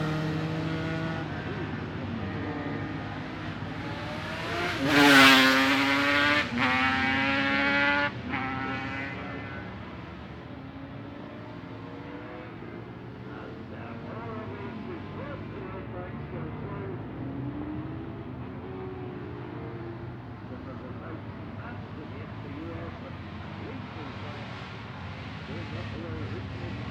Jacksons Ln, Scarborough, UK - barry sheene classic 2009 ... parade laps ...
barry sheene classic 2009 ... parade laps ... one point stereo mic to minidisk ...
23 May 2009, ~11:00